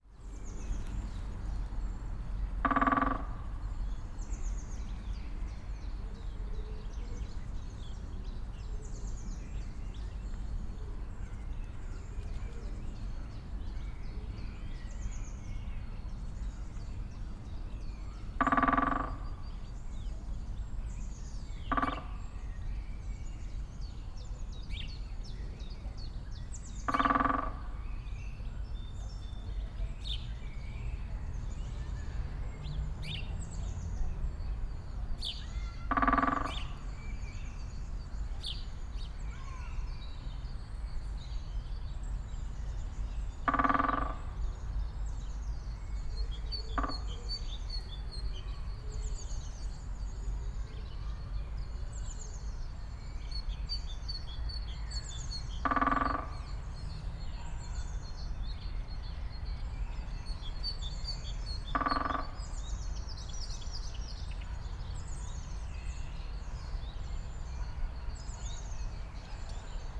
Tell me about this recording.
Großer Buntspecht, great spotted woodpecker (Dendrocopos major). I've heard quite a few this morning, but this one was special. He has choosen a nesting box for bats, high above in a tree, as a resonance box for his drumming performance. That made his sound definitely the most impressive and loudest one among the other woodpeckers here. And it was successful, after a few minutes, a female bird showed up and they left the tree together. (SD702, DPA4060)